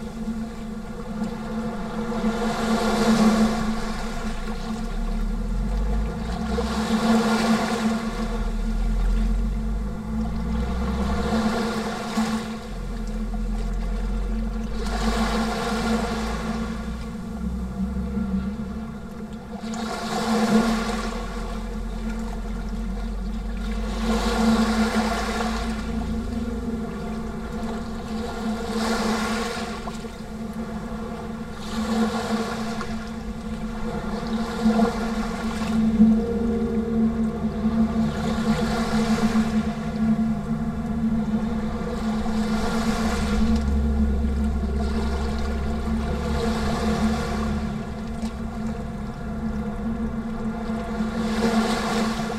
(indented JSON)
{
  "title": "Galatas, Crete, in the tube",
  "date": "2019-05-02 14:20:00",
  "description": "a tube for rainwater in concrete",
  "latitude": "35.51",
  "longitude": "23.96",
  "altitude": "3",
  "timezone": "Europe/Athens"
}